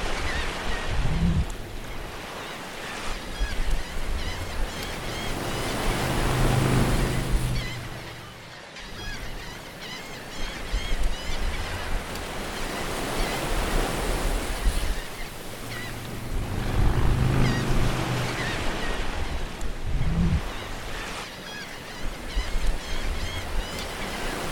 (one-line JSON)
{"title": "La Push, Washington, Stati Uniti - The perfect sea", "date": "2015-02-28 12:35:00", "latitude": "47.91", "longitude": "-124.64", "timezone": "America/Los_Angeles"}